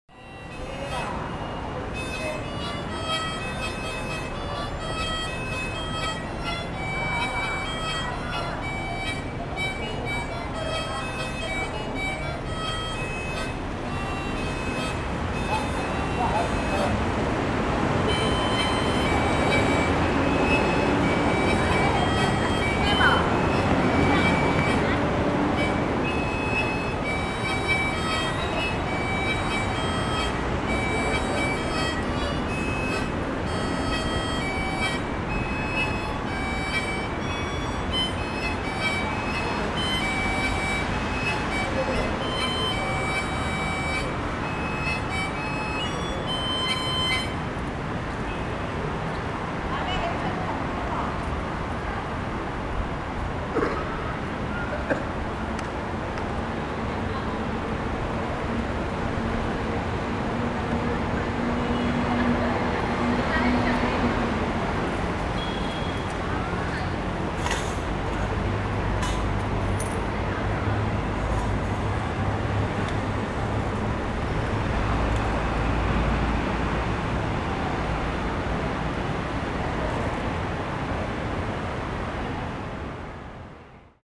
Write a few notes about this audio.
Buskers, Sony ECM-MS907, Sony Hi-MD MZ-RH1